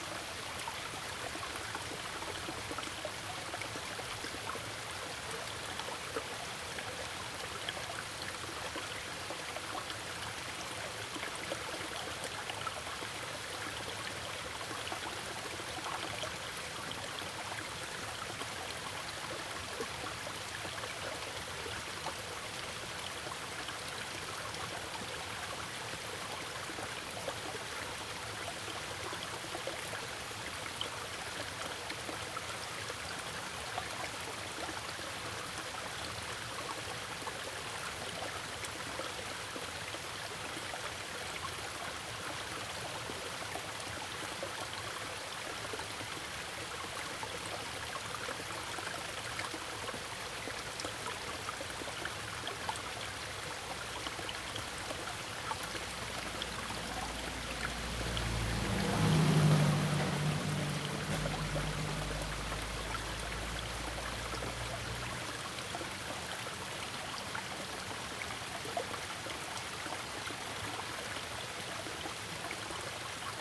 Zhonggua Rd., Puli Township, 南投縣 - Small streams
The sound of water streams
Zoom H2n MS+XY